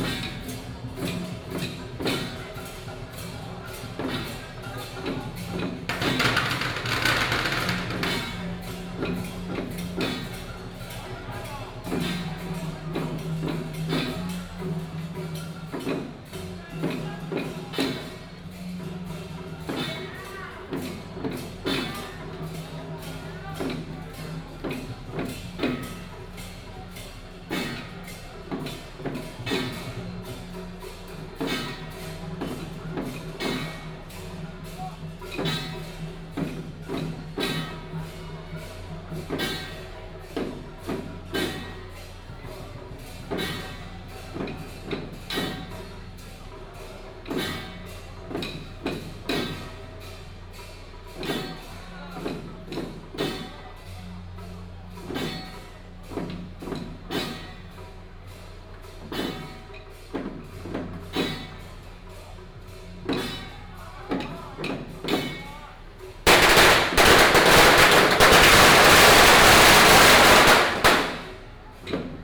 大仁街, Tamsui District - Traditional temple festivals
Traditional temple festivals, Firecrackers
Tamsui District, New Taipei City, Taiwan, 20 June 2015